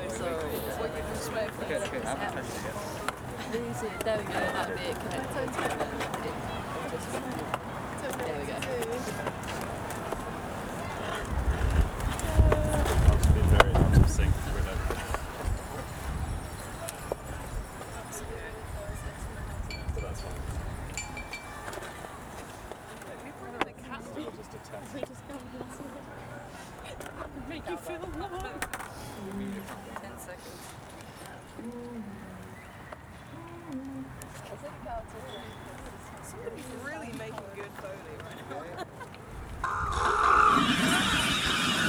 Richmond Terrace, Brighton, Vereinigtes Königreich - Brighton - the Level - sounder preperation
In Brighton at the Level - a public playground - here a short recording of the preperation of a group of art students for their presentation at the Brighton sound art festival
soundmap international:
social ambiences, topographic field recordings